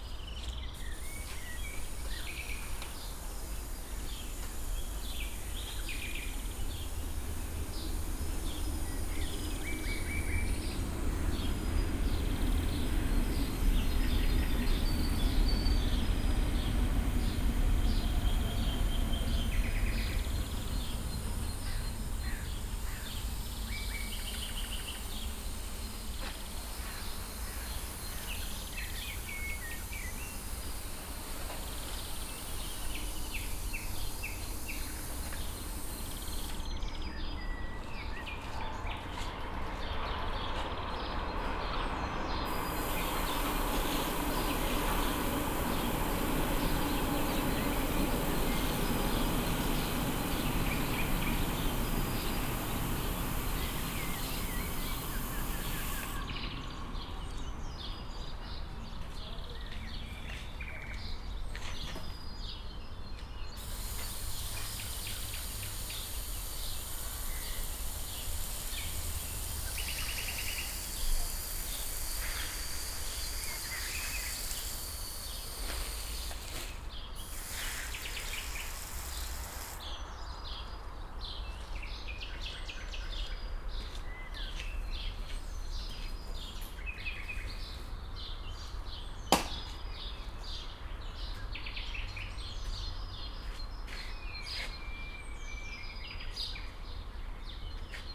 Beermannstr., Alt-Treptow, Berlin - man watering garden, ambience
garden area between Beermanstr. and S-bahn tracks. this area will vanish in a few years because of the planned A100 motorway.
(SD702 DPA4060)
Deutschland, European Union, 12 May 2013